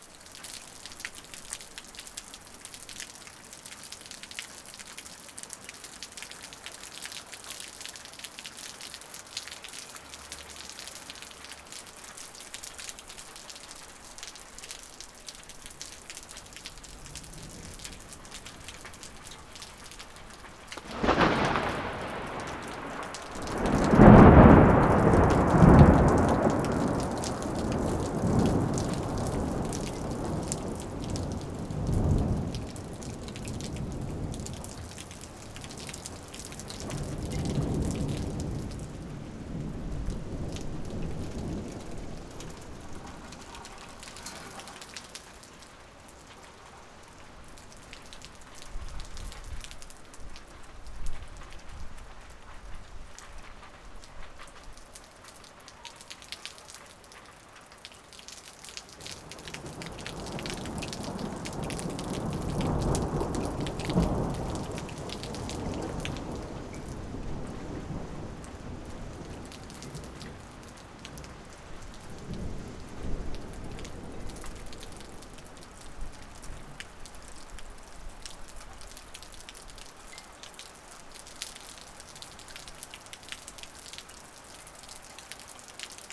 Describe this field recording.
sudden thunderstorm on solstice evening, the drain can't take all the water, (Sony PCM D50 120°)